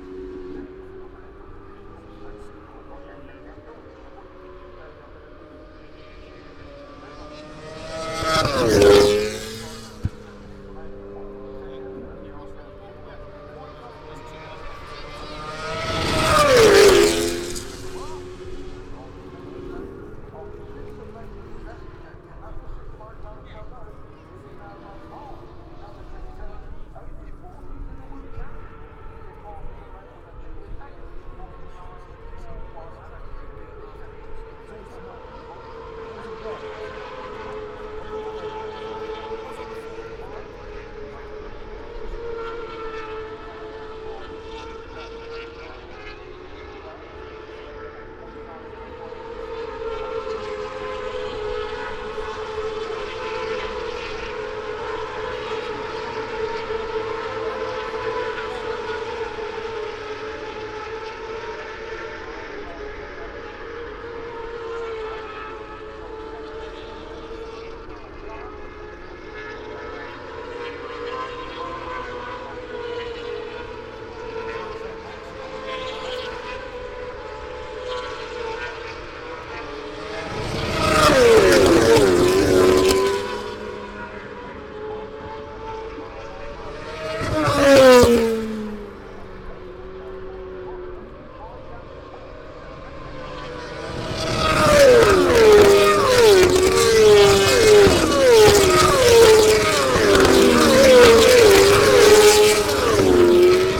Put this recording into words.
British Motorcycle Grand Prix 2018 ... moto two ... free practic three ... maggotts ... lvalier mics clipped to sandwich box ...